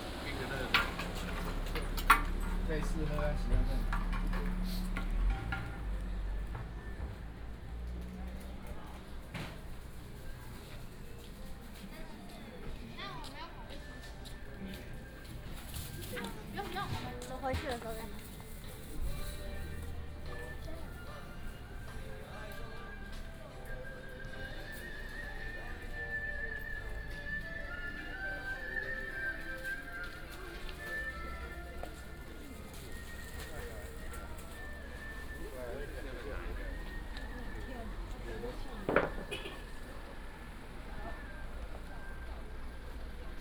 Neiwan, Hengshan Township - Shopping Street
Sightseeing Street
Binaural recordings
Sony PCM D100+ Soundman OKM II